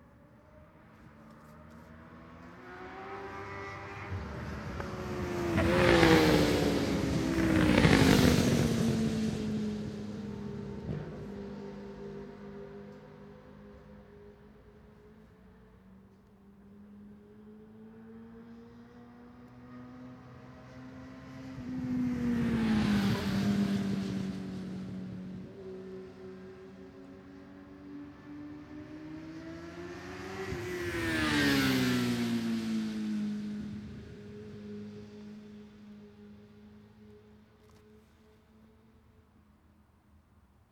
british superbikes 2005 ... superbikes qualifying two ... one point stereo mic to minidisk ...